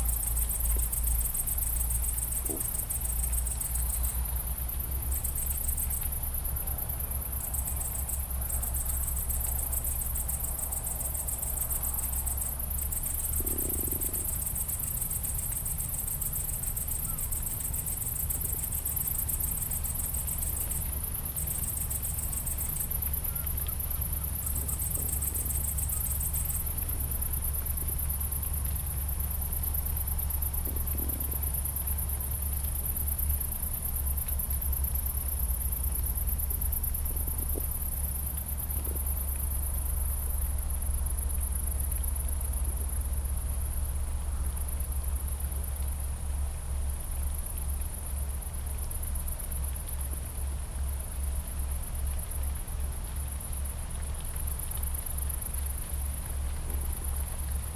Marais-Vernier, France - Criquets
This day, we slept in a pasture. Here, some criquets sing during the night.